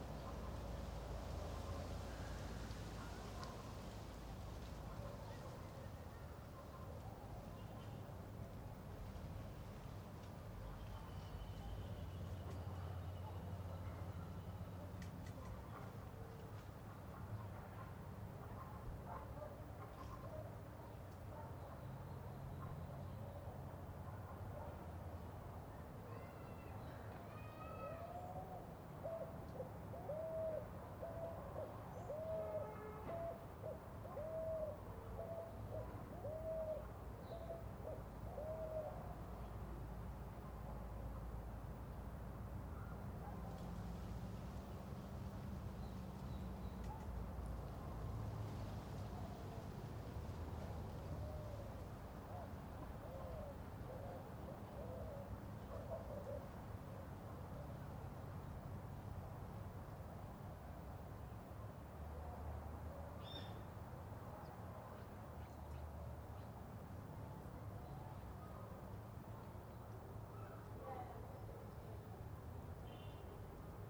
Calle, Mercedes, Buenos Aires, Argentina - Casa
En el patio de la casa donde viví mi infancia.
16 June, 15:00